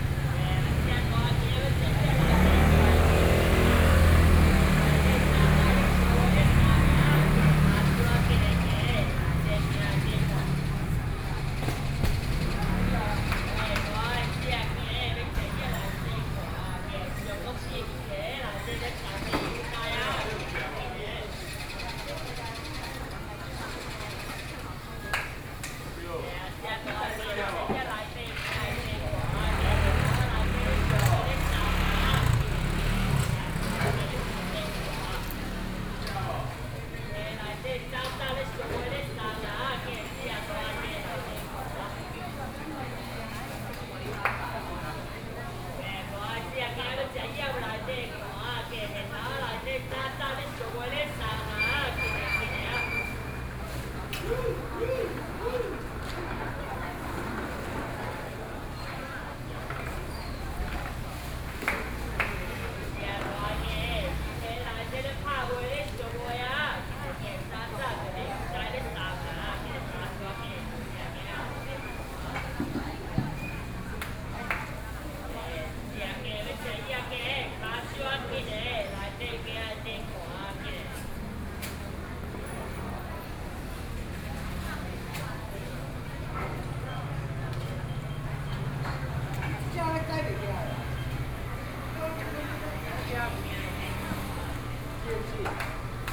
Nanxing St., Yilan City - Traditional Market
Market selling fruits and vegetables, Binaural recordings, Zoom H4n+ Soundman OKM II
Yilan County, Taiwan